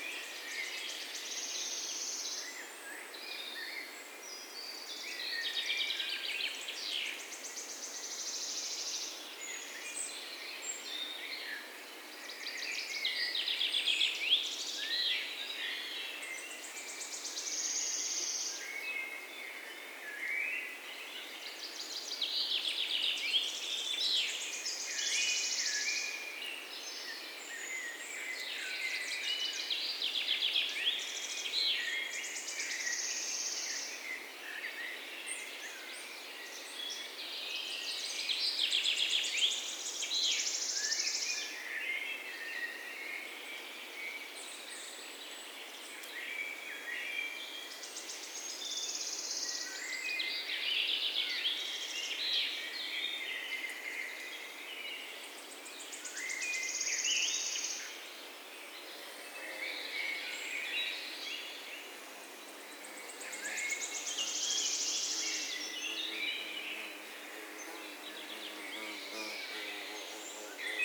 Kongens Lyngby, Danmark - Birds, Spring Symphonie
The sound of energetic and happy birds, early spring, some bypassing flyes now and then. Øivind Weingaarde.
Recorded with zoom H6 and Rode NTG 3